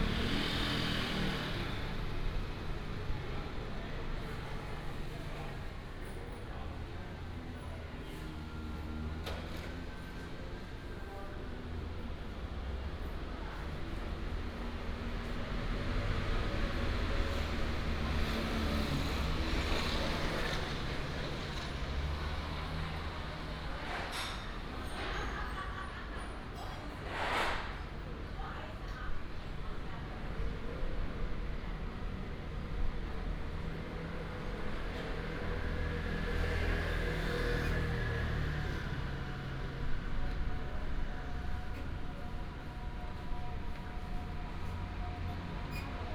北門市場, Hsinchu City - in the market
At the door of the market, Binaural recordings, Sony PCM D100+ Soundman OKM II
30 October 2017, 08:52